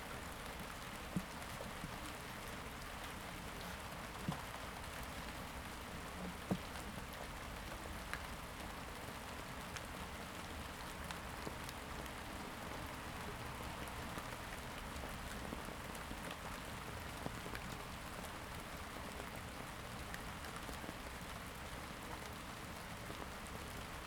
Ascolto il tuo cuore, città. I listen to your heart, city. Several chapters **SCROLL DOWN FOR ALL RECORDINGS** - Round midnight with light rain in the time of COVID19 Soundscape
"Round midnight with light rain in the time of COVID19" Soundscape
Chapter CIII of Ascolto il tuo cuore, città, I listen to your heart, city
Monday, June 8th – Tuesday June 9th 2020. Fixed position on an internal terrace at San Salvario district Turin, ninety-one days after (but day thirty-seven of Phase II and day twenty-four of Phase IIB and day eighteen of Phase IIC) of emergency disposition due to the epidemic of COVID19.
Start at 11:42 p.m. end at 00:01 a.m. duration of recording 19'22''.
8 June, 23:42